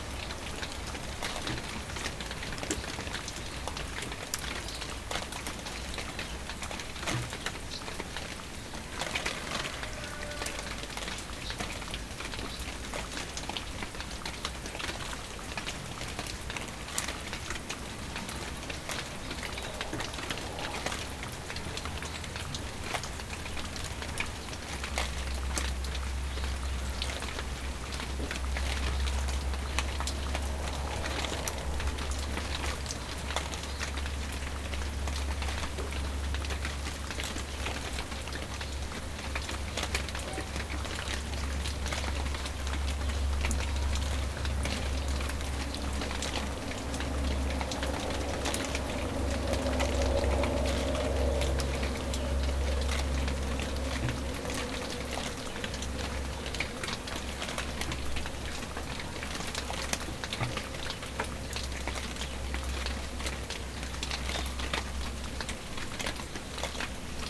Bonaforth, leichter Sommerregen, hinter dem Stall
Hann. Münden, Germany, 23 July 2010, 09:10